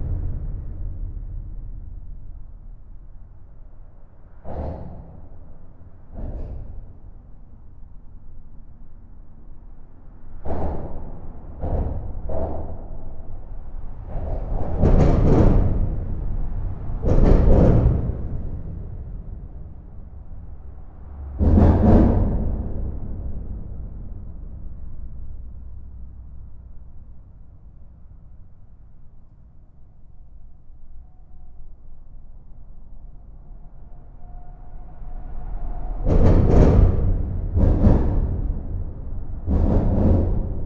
The Normandie bridge, recorded inside the bridge. This is an extreme environment, with an exacerbated violence. A lot of trucks are driving fast, doing huge impacts on the bridge structure. This bridge is very big and an interesting place to record.
France, 21 July 2016, 09:00